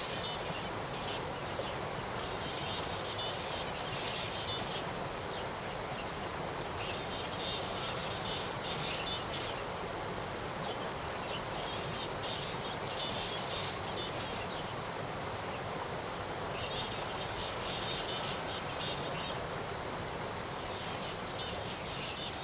南坑一號橋, 埔里鎮成功里 - Birds and Chicken sounds
early morning, Birdsong, Chicken sounds
Zoom H2n MS+XY